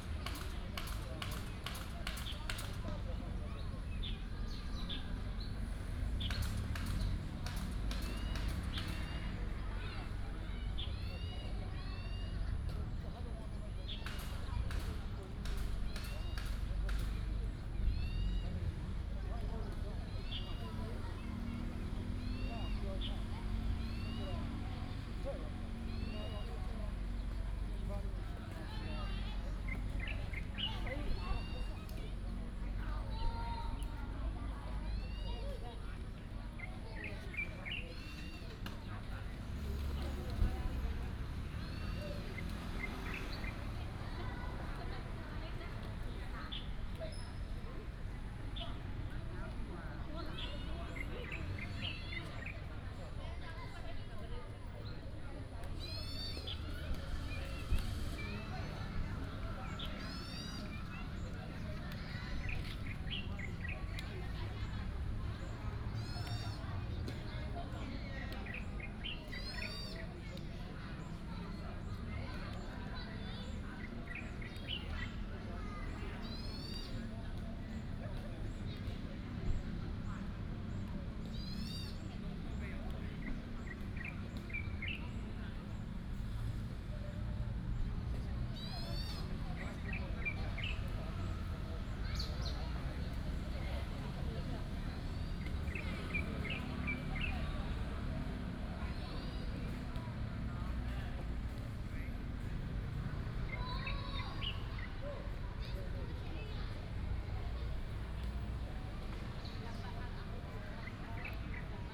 birds sound, In the Park, Traffic sound
Taoyuan City, Taiwan, 10 July 2017